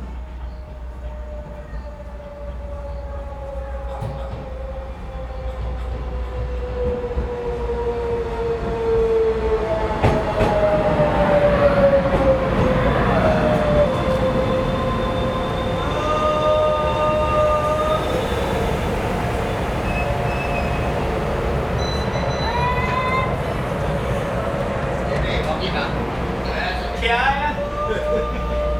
Fulong Station, Gongliao Dist., New Taipei City - At railway platforms
At railway platforms, The train arrived at the station
Zoom H4n+ Rode NT4